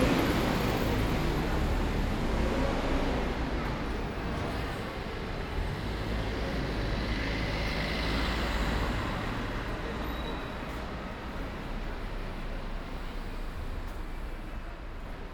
"It’s five o’clock on Thursday with bells, post-carding and howling dog in the time of COVID19" Soundwalk
Chapter XC of Ascolto il tuo cuore, città. I listen to your heart, city
Thursday, May 28th 2020. San Salvario district Turin, walking to Corso Vittorio Emanuele II and back, seventy-nine days after (but day twenty-five of Phase II and day twelve of Phase IIB and day six of Phase IIC) of emergency disposition due to the epidemic of COVID19.
Start at 4:50 p.m. end at 5:19 p.m. duration of recording 29’13”
The entire path is associated with a synchronized GPS track recorded in the (kmz, kml, gpx) files downloadable here:
Piemonte, Italia, 28 May